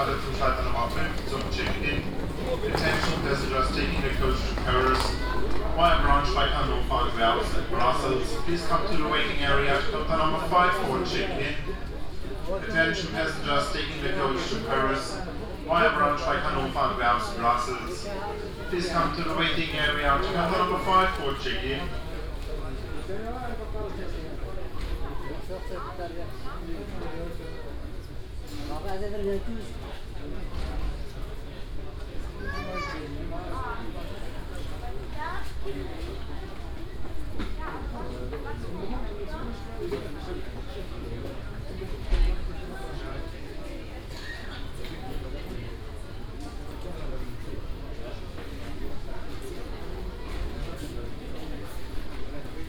Berlin, Westend, Zentraler Omnibusbahnhof Berlin - waiting hall
ambience of the crowded waiting hall of ZOB. People of many nationalities getting their tickets, checking in, waiting for their bus to arrive or maybe just sitting there having nowhere to go on this frosty evening.
Berlin, Germany, 2 December 2014